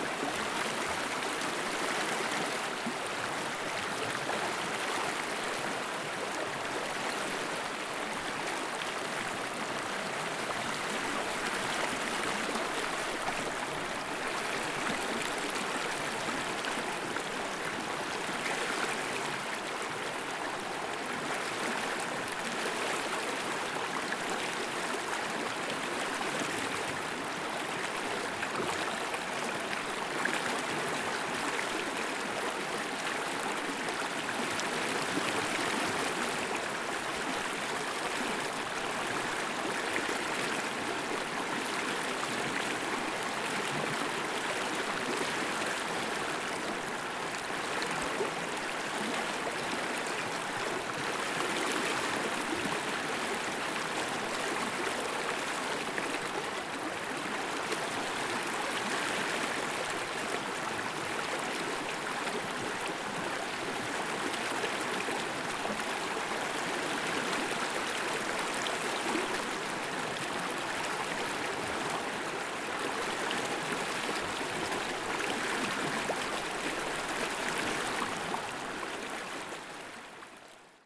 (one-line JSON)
{"title": "Môlay, France - River sounds", "date": "2020-12-25 16:00:00", "description": "Sounds of the water movement, Serein river, Môlay, France.\nRecorded with a Zoom H4n", "latitude": "47.73", "longitude": "3.94", "altitude": "159", "timezone": "Europe/Paris"}